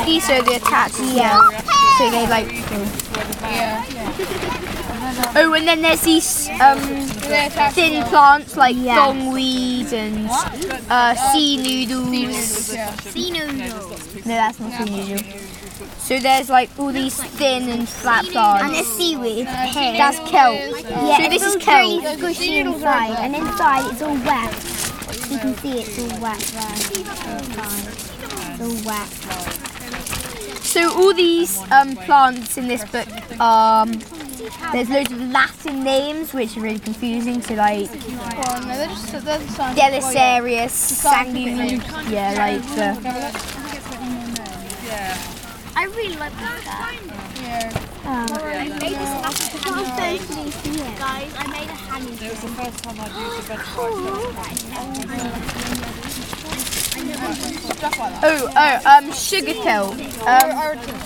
Ringstead Bay, Dorchester, Dorset - Seaweed stories
Children from Dorset Beach School share their seaweed stories with the rest of the group after finding various types of seaweed on the beach, using magnifying pots and books for research. All the children are sat in the shelter they have built to learn about their findings.
Dorset Beach School is part of Dorset Forest School.
Sounds in Nature workshop run by Gabrielle Fry. Recorded using an H4N Zoom recorder.
Weymouth, Dorset, UK, 21 July 2015, 15:25